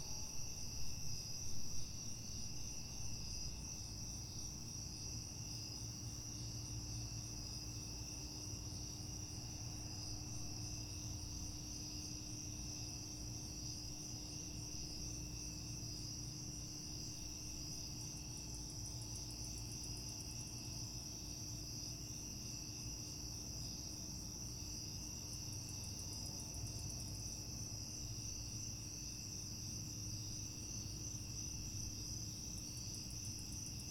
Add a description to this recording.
Sounds of a summer night in Leesburg, VA. Recorded on a Tascam DR-07MKII with internal mics in A-B (wide stereo) position. Post-processing included: trimming start and finish (with fades), cutting two small sections of distortion in the middle, and normalizing. Recording starts at about 12:33am on August 16, 2015.